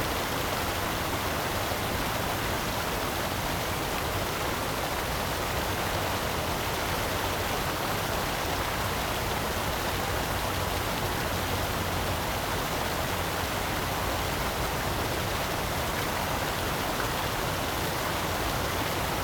燕潭, 臺南公園, Tainan City - on the edge of the lake
in the Park, on the edge of the lake
Zoom H2n MS+XY